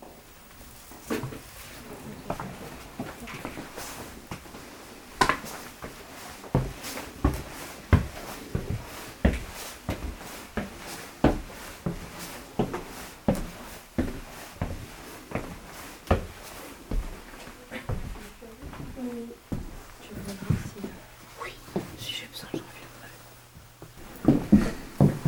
Chartres, France - Creative arts store
A small walk into a creative arts store. This is located in a very old traditional house.
30 December 2015